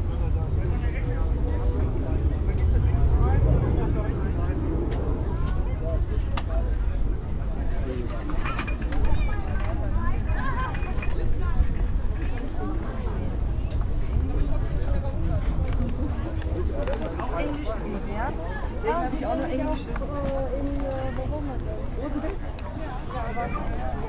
Füsseldorf, fair, parking area, flee market - düsseldorf, fair, parking area, flee market
sunday flee market atmo plus aeroplane landing approach
soundmap nrw: social ambiences/ listen to the people in & outdoor topographic field recordings
5 July, ~12:00